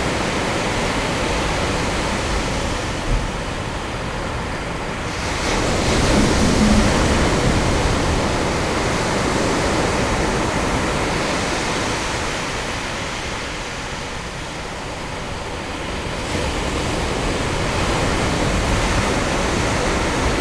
Sidi Ifni, Hotel Ait Baamrane, Ocean
Africa, Morocco, ocean